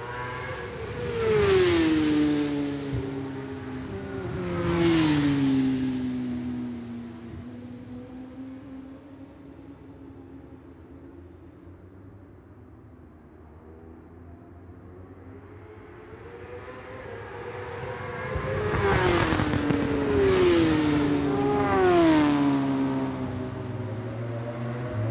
West Kingsdown, UK - British Superbikes 2004 ... qualifying two ...
British Superbikes ... qualifying two ... Dingle Dell ... Brands Hatch ... one point stereo mic to mini disk ...